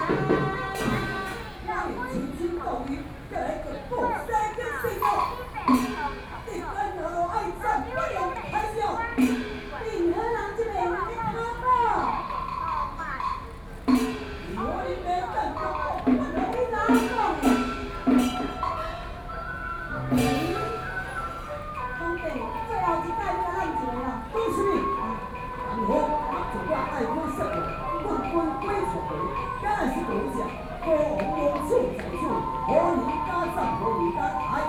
Buddhist Temple, Luzhou - Taiwanese Opera
in the Temple Square, Taiwanese Opera, Binaural recordings, Sony PCM D50 + Soundman OKM II
New Taipei City, Taiwan